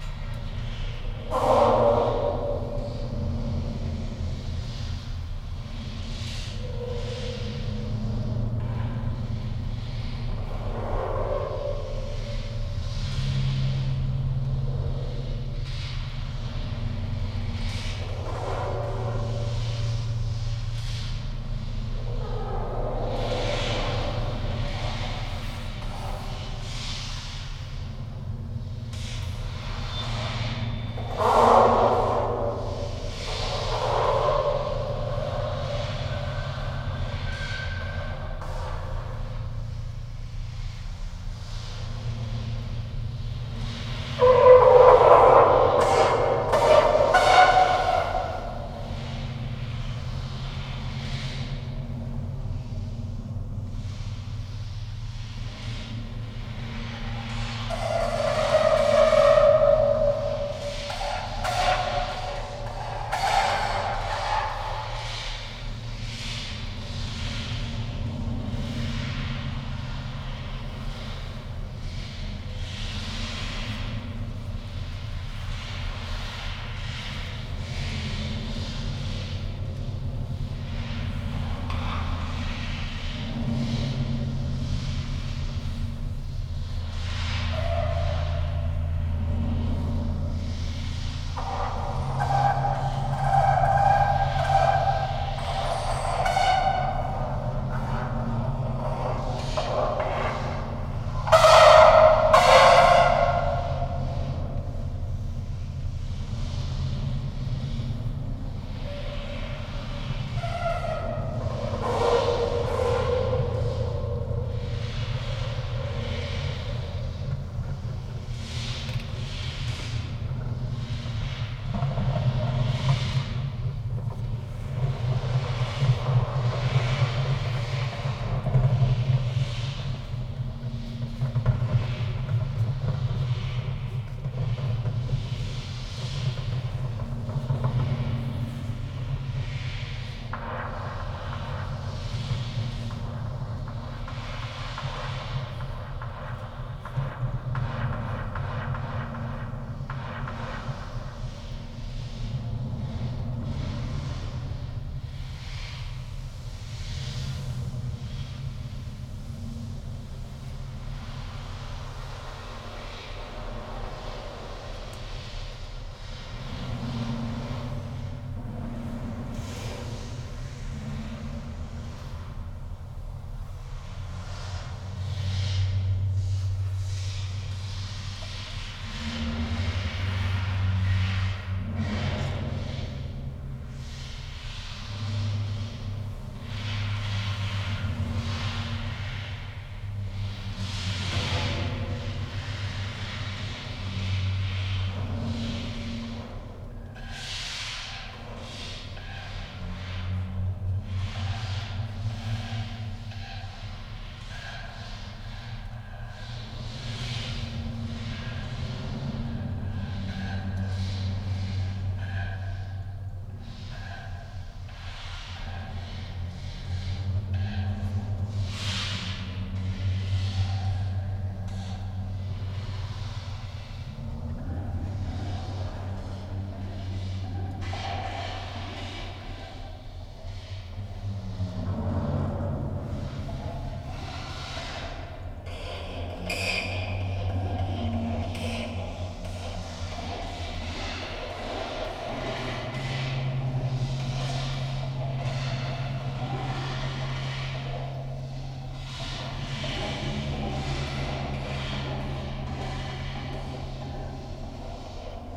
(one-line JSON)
{"title": "playing the great flag pole, Heybeliada", "date": "2010-03-01 17:09:00", "description": "microphones places inside reveal a great echo while playing", "latitude": "40.87", "longitude": "29.09", "altitude": "121", "timezone": "Europe/Tallinn"}